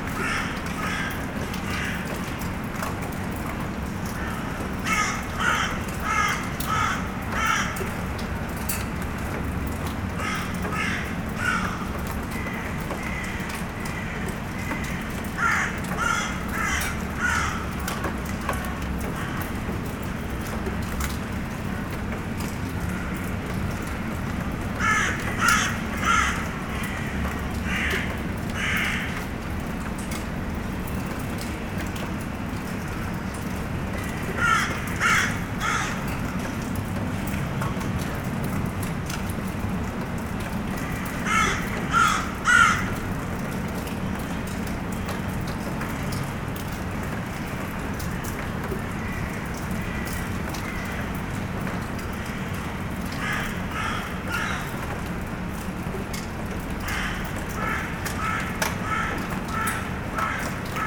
Seraing, Belgique - The coke plant
General ambiance in the abandoned coke plant, from the mechanical workshop stairs. Crows are shouting and there's a small rain.
Seraing, Belgium, 18 March 2017